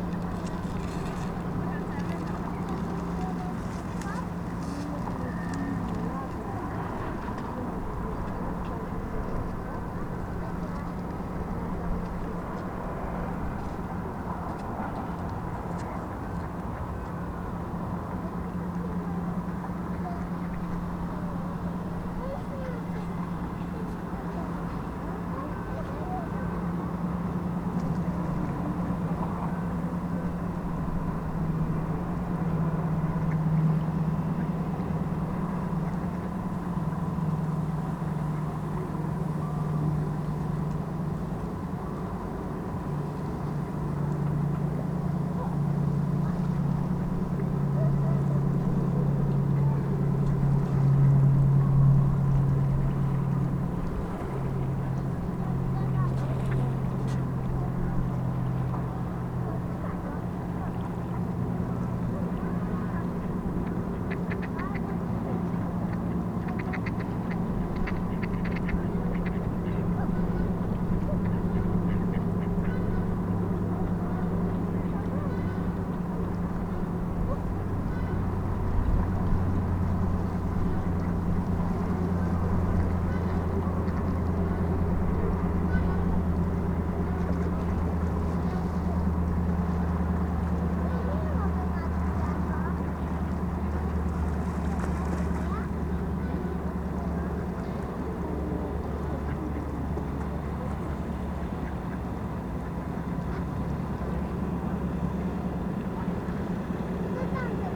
mother and child feed ducks, cars crossing the bridge, a towboat passes by on the oder river, accordion music and an old metal swing squeaking in the distance
the city, the country & me: january 3, 2014
Gryfino, Poland, 3 January 2014